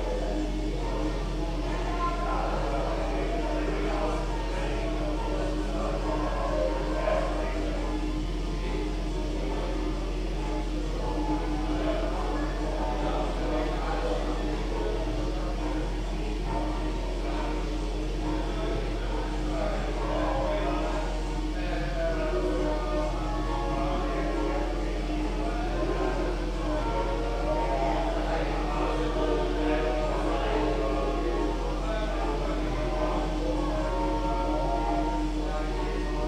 berlin, reuterstraße: bar - the city, the country & me: wc ventilation of yuma bar
wc ventilation at yuma bar, reggae music wafting through the open wc door
the city, the country & me: april 24, 2010